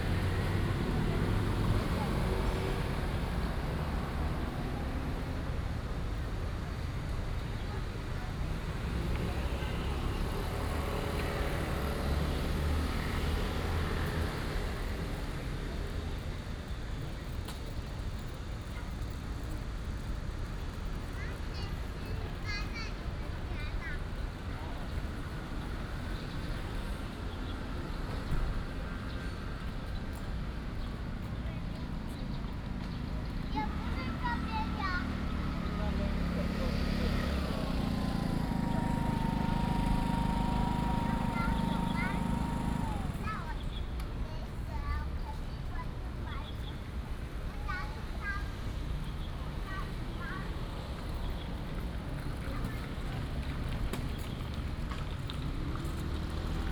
Sitting in the street, Traffic Sound, The elderly and children